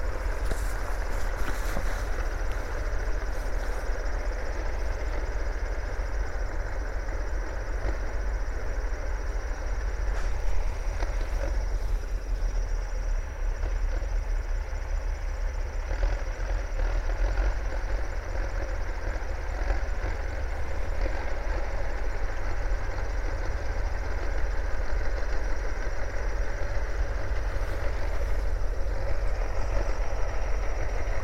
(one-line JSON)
{"date": "2010-07-04 02:10:00", "description": "Hardworking farmers spend white nights making silo. Tractor\nsounds at work 2am at night... Short soundwalk with binaural microphones.", "latitude": "59.26", "longitude": "27.38", "altitude": "75", "timezone": "Europe/Tallinn"}